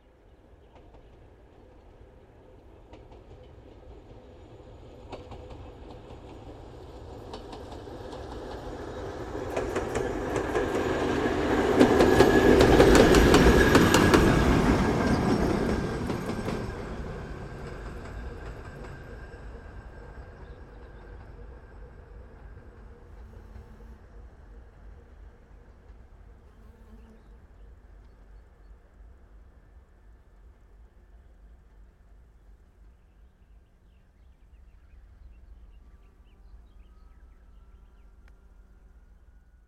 ERM fieldwork -lone engine passing
a single engine passes on its way to the mine
Ida-Virumaa, Estonia